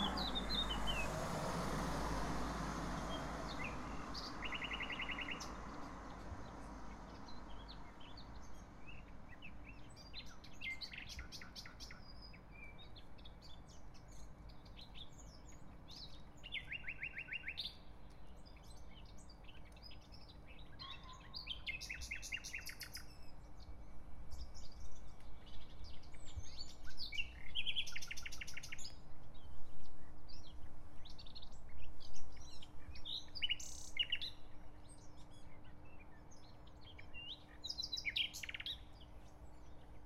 Czerwone Maki, Kraków, Poland - massive and microscopic sense-meaning: nightingale
Day 1 of the autoethnographic, collaborative writing project.
województwo małopolskie, Polska, 18 May 2020